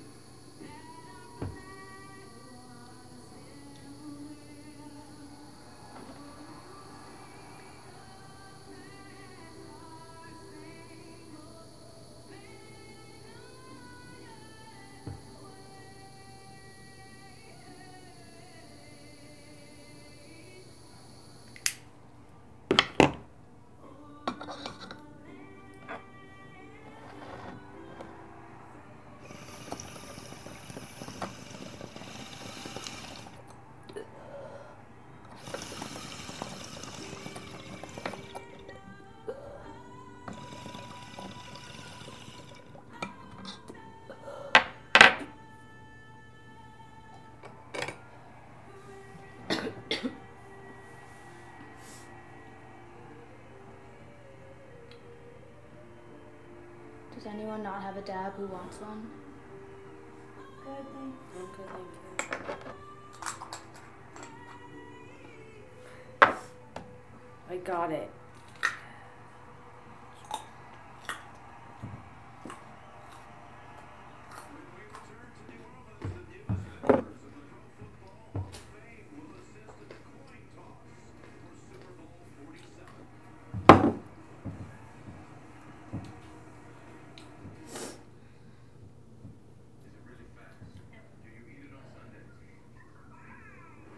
"The Mothership" 951 15th Street, Boulder, CO - Anthem Dab
Listening to the national anthem while smoking hash oil.